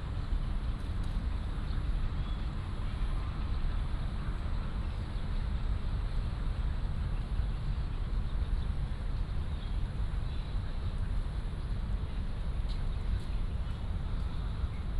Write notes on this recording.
in the Park, Bird calls, Walking along the ecological pool